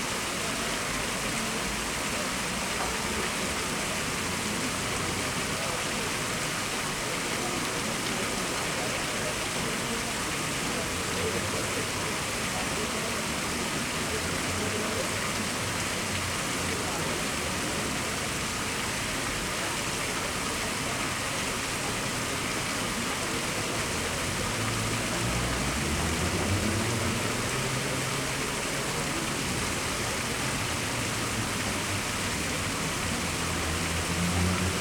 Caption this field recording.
(( rénovation de la place Royale terminée )), Fontaine représentant la Loire Majestueuse entourée de ses 4 confluents. Fontaine réalisée par Daniel Ducommun de Locle, Guillaume Grootaërs et Simon Voruz en 1865.